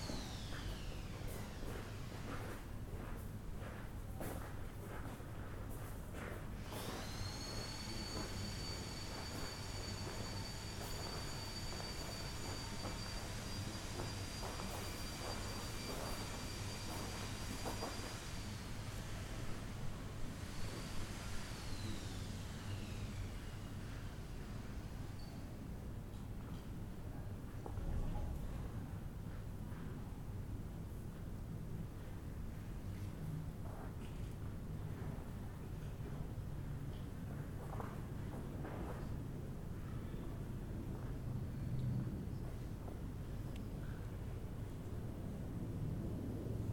Rue de Fürstenberg, Paris, France - In the Garden at the Delacroix Museum
Recorded while sitting and sketching in the garden of the Musée National Eugène-Delacroix in Paris. This was where French painter Eugène Delacroix lived from 1858 to 1863.